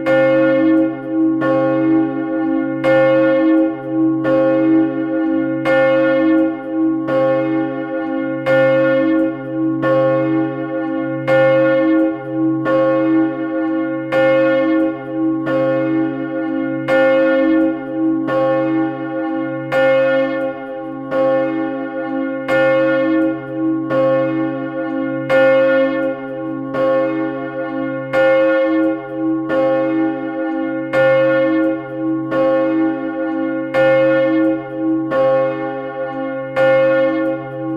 Enghien, Belgique - Enghien bell
Solo of the Enghien big bell. This is an old bell dating from 1754 and it weights 3 tons. Recorded inside the tower.